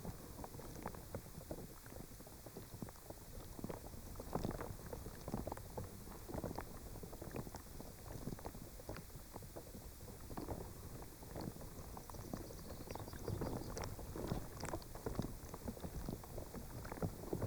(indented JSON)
{
  "title": "Lithuania, Sudeikiai, dried reeds on water",
  "date": "2013-05-19 14:30:00",
  "description": "recorded with contact mics",
  "latitude": "55.62",
  "longitude": "25.68",
  "altitude": "143",
  "timezone": "Europe/Vilnius"
}